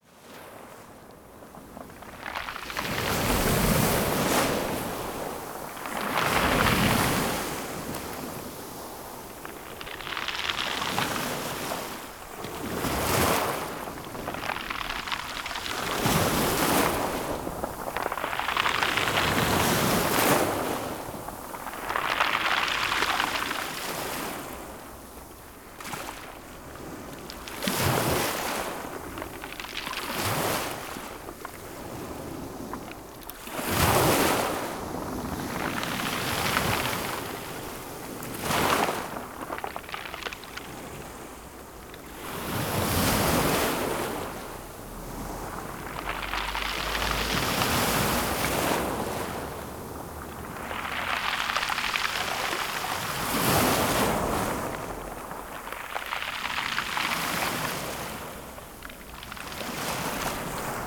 Beach in Sukhumi, Abkhazia (Georgia) - Waves breaking onto the beach in Sukhumi
Recored with a Tascam DR-05 held half a meter above the breaking waves.